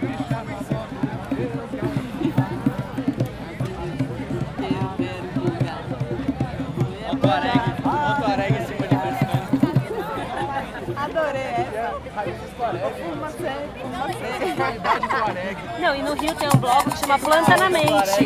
Salvador, Bahia, Brazil - Marijuana March Ambience 2
The ambience before a legalise marijuana march in Salvador, Brazil